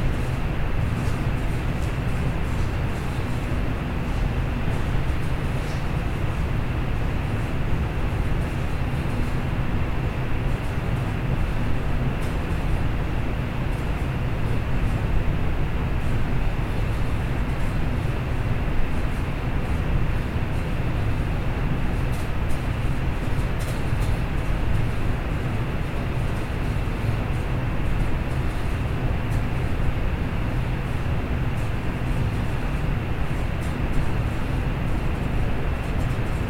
DIA:, Beacon, NY, Verenigde Staten - Windy alley
Zoom H4n Pro